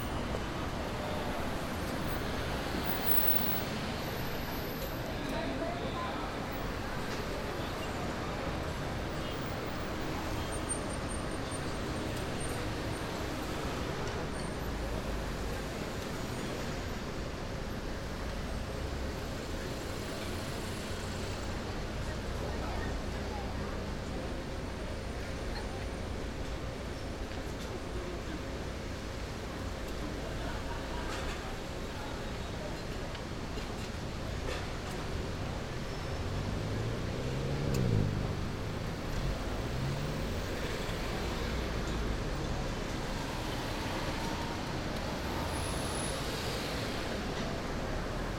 Rua Antônio Carlos - 4-000, R. da Consolação, 0130 - República, São Paulo, Brasil - Antônio Carlos - São Paulo - Brazil
At lunch time, next to Paulista, people walk around and eat. Cars and motorcycles pass by.
Recorded with Tascam DR-40 recorder and Shotgun Rode NTG 2 microphone.